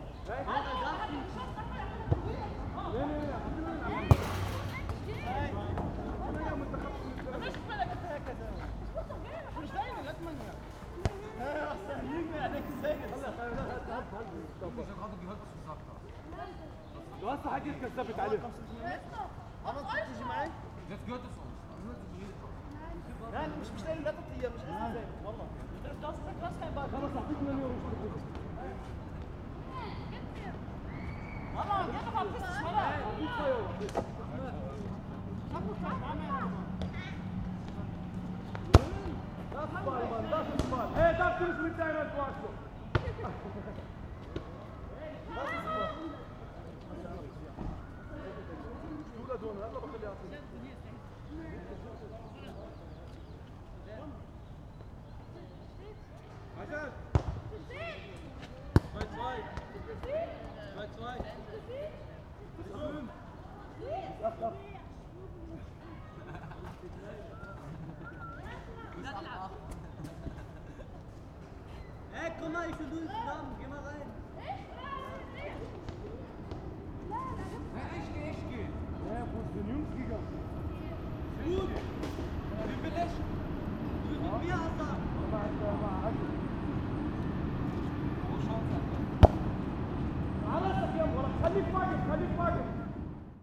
berlin wedding sparrstr.
wedding walks, sparrstr., football area